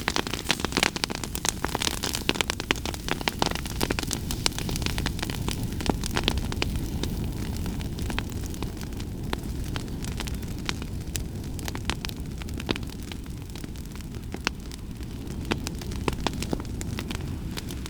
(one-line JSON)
{"title": "Burning Laurel Leaves, Bredenbury, Herefordshire, UK - Bonfire", "date": "2019-02-23 14:01:00", "description": "A bonfire of burning laurel branches and leaves which burn well even when green. Recorded with a Mix Pre 6 II and 2 Sennheiser MKH 8020s.", "latitude": "52.20", "longitude": "-2.57", "altitude": "215", "timezone": "Europe/London"}